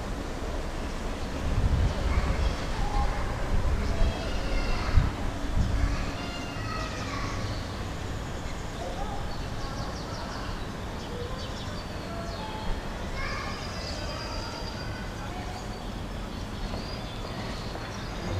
Perchel Sur, Málaga, Málaga, Espanja - Asking the way, walking around
Walking to the bus station in a peaceful area.
Málaga, Málaga, Spain